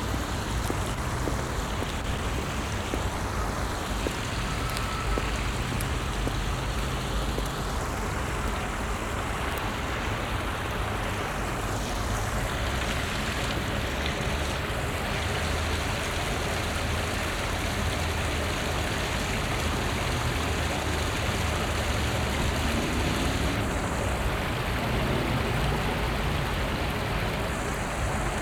Auf einer hölzernen Fussgängerbrücke zur Brehminsel in Essen Werden. Die Klänge der vorbeigehenden Spaziergänger, das Plätschern eines kleinen Wehrs unter der Brücke, ein Fahrrad und im Hintergrund die Motorengeräusche der Hauptverkehrsstraße, die hier viel von Motorradfahrern genutzt wird.
On a wooden pedestrian bridge. The sound of passing by strollers, water sounds from a smalll dam undernetah the bridge and a bicycle. In the background motor sounds from the street traffic.
Projekt - Stadtklang//: Hörorte - topographic field recordings and social ambiences